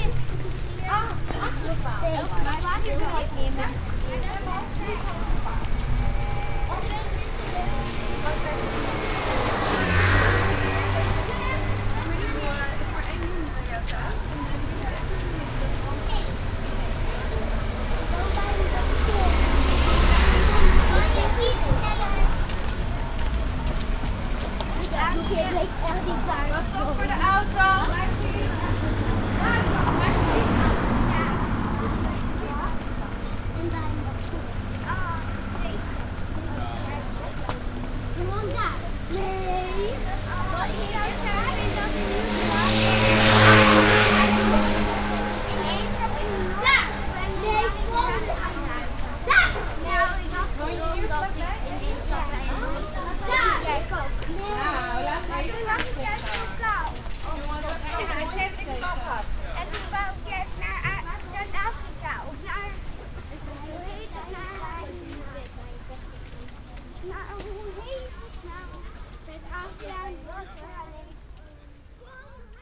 {"title": "amsterdam, leidsegracht, a school children group", "date": "2010-07-11 12:15:00", "description": "on a hot summer noon, a larger group of dutch school kids walking long the channel\ncity scapes international - social ambiences and topographic field recordings", "latitude": "52.37", "longitude": "4.88", "altitude": "-1", "timezone": "Europe/Amsterdam"}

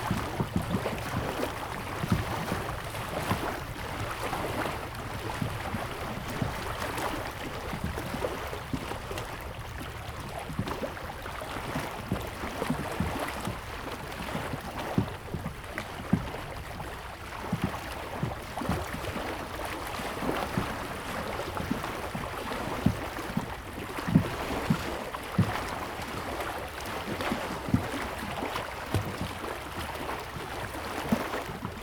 {"title": "環湖公路10號, Taoyuan City - Lake and ship", "date": "2017-08-09 17:43:00", "description": "Small pier, Lake and ship\nZoom H2nMS+XY", "latitude": "24.82", "longitude": "121.31", "altitude": "260", "timezone": "Asia/Taipei"}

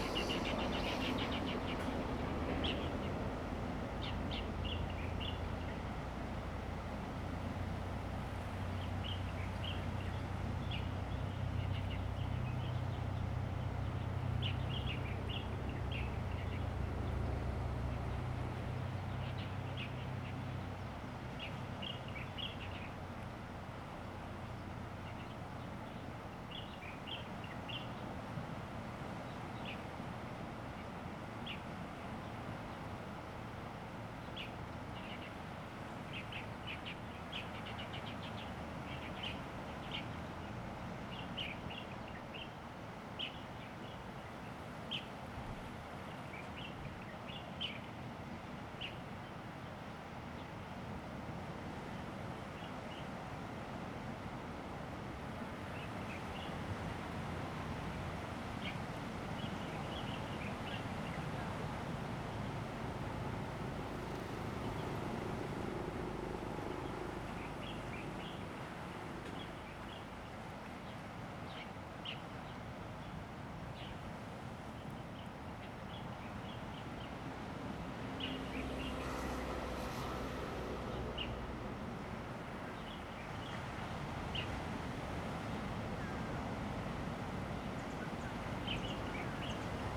{"title": "富山村, Beinan Township - Birds and the waves", "date": "2014-09-08 07:55:00", "description": "Birds singing, Sound of the waves\nZoom H2n MS +XY", "latitude": "22.84", "longitude": "121.19", "altitude": "6", "timezone": "Asia/Taipei"}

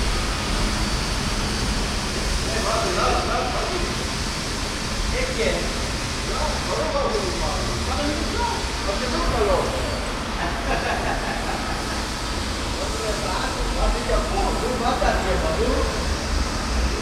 {"title": "essen, rathaus galerie, entry to subway", "date": "2011-05-31 18:23:00", "description": "Im Zugangsbereich zu den U Bahnen. Geräusche von Passanen, der Rolltreppenanlage und der Bodenreinigungsmaschine eines Reinigungsteams.\nat the entrance to the subway station. souns of pasengers, the moving stairways and a cleaning machine\nProjekt - Stadtklang//: Hörorte - topographic field recordings and social ambiences", "latitude": "51.46", "longitude": "7.01", "altitude": "75", "timezone": "Europe/Berlin"}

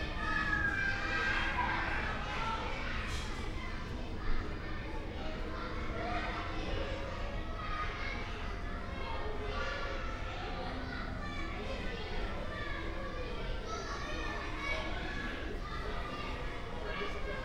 {"title": "R. das Flores de Santa Cruz, Lisboa, Portugal - Escola Básica, basic school, ambience", "date": "2017-10-26 14:20:00", "description": "Lisbon, near Castelo San Jorge, sound of kids playing in nearby school yard, street ambience (Sony PCM D50, DPA4060)", "latitude": "38.71", "longitude": "-9.13", "altitude": "89", "timezone": "Europe/Lisbon"}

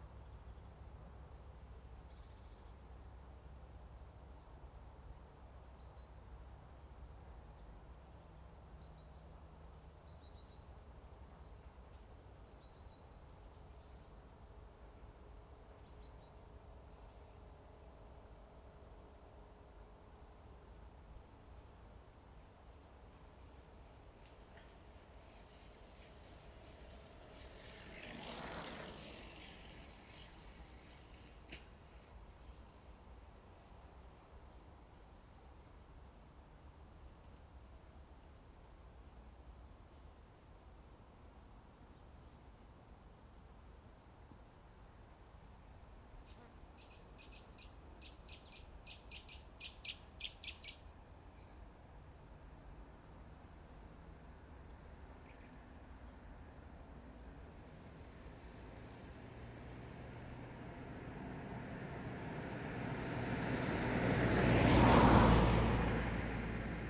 {"title": "Am Adelsberg, Bad Berka, Germany - Quiet spaces beneath Paulinenturm Bad Berka 2.", "date": "2020-07-23 13:54:00", "description": "Best listening with headphones on low volume.\nA relaxed atmosphere with soft breezes, prominent sound of a bird, soft bicycle tour and traffic with varied perspectives and field depths.\nThis location is beneath a tourist attraction \"Paulinenturm\".The Paulinenturm is an observation tower of the city of Bad Berka. It is located on the 416 metre high Adelsberg on the eastern edge of the city, about 150 metres above the valley bottom of the Ilm.\nRecording and monitoring gear: Zoom F4 Field Recorder, LOM MikroUsi Pro, Beyerdynamic DT 770 PRO/ DT 1990 PRO.", "latitude": "50.90", "longitude": "11.29", "altitude": "323", "timezone": "Europe/Berlin"}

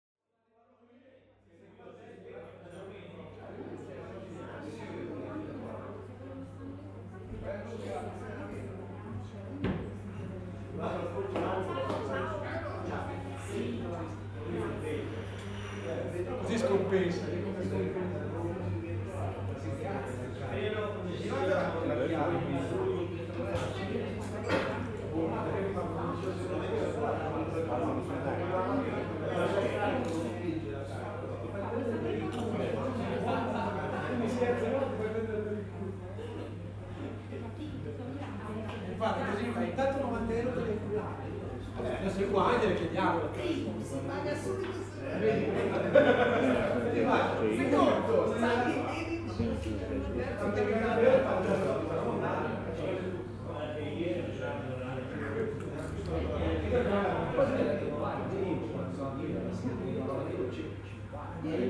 {"title": "Bar am Schiffsteg in Luino", "date": "2010-12-20 15:20:00", "description": "Schiffsteg, Luino, Bar, Prosecco, Auguri, bon anno, Fussballgeplauder, amici, vino bianco, vino rosso, gelato, bon caffè", "latitude": "46.00", "longitude": "8.74", "altitude": "199", "timezone": "Europe/Rome"}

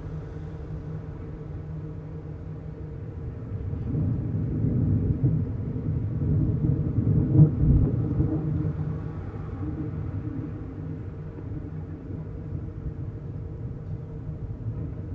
{"title": "Maribor, Slovenia - one square meter: handrail support poles, fourth pair", "date": "2012-08-27 13:31:00", "description": "a series of poles along the riverside that once supported handrails for a now-overgrown staircase down to the waters edge. the handrails are now gone, leaving the poles open to resonate with the surrounding noise. all recordings on this spot were made within a few square meters' radius.", "latitude": "46.56", "longitude": "15.65", "altitude": "263", "timezone": "Europe/Ljubljana"}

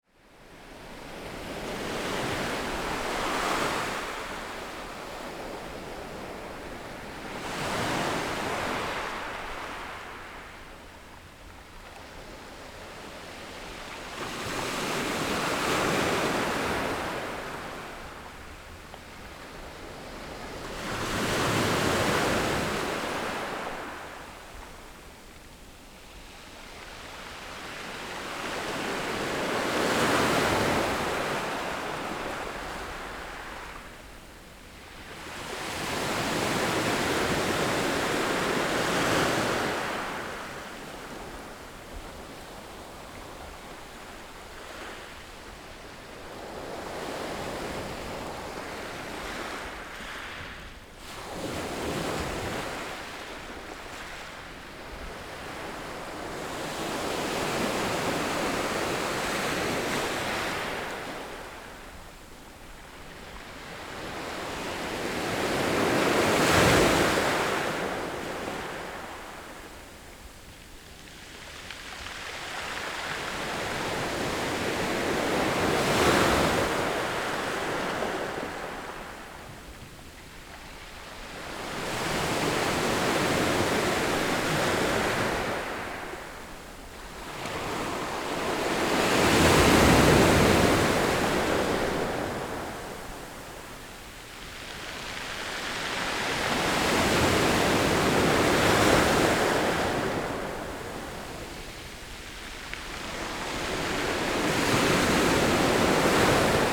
{"title": "Chenggong Township, Taiwan - sound of the waves", "date": "2014-09-08 10:06:00", "description": "Sound of the waves\nZoom H6 XY+NT4", "latitude": "23.13", "longitude": "121.40", "timezone": "Asia/Taipei"}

